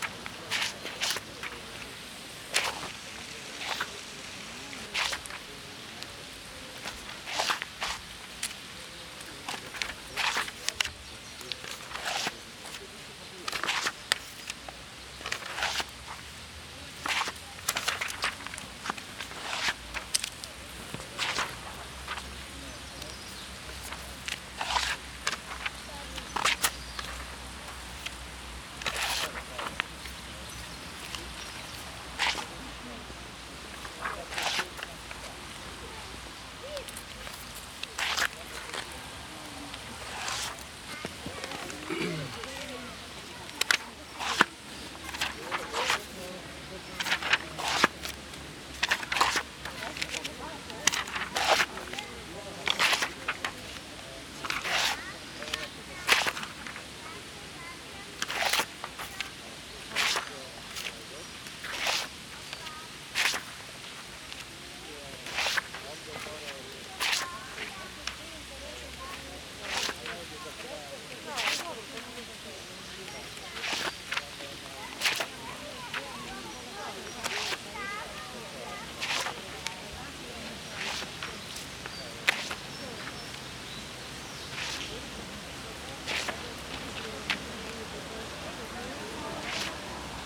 artist Vlado Martek making his way through town at the speed of posing white sheets of paper (A4) on the pavement
City of Zagreb, Croatia